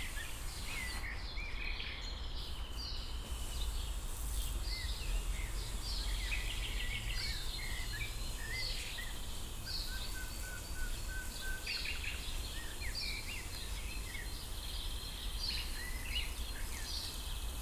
Beermannstr., Alt-Treptow, Berlin - man watering garden, ambience
garden area between Beermanstr. and S-bahn tracks. this area will vanish in a few years because of the planned A100 motorway.
(SD702 DPA4060)